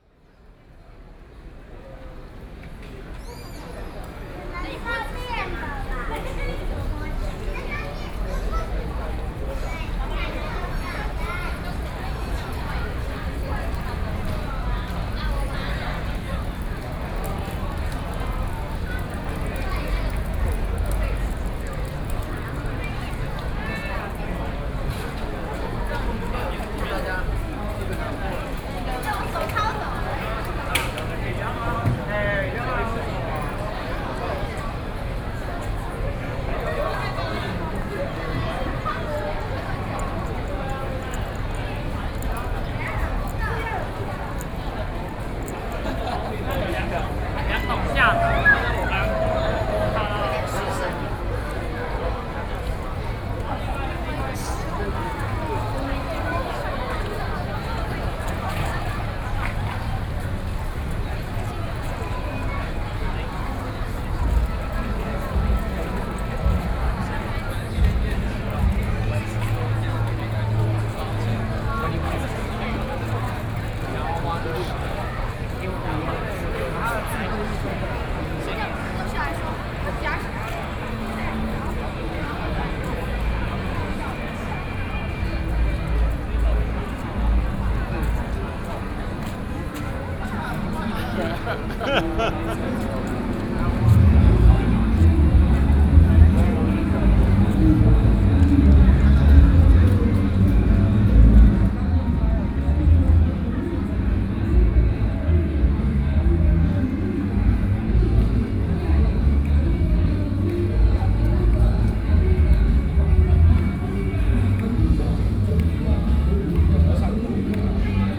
Huashan 1914 Creative Park - Soundwalk
Holiday crowds, Sound Test, Sony PCM D50 + Soundman OKM II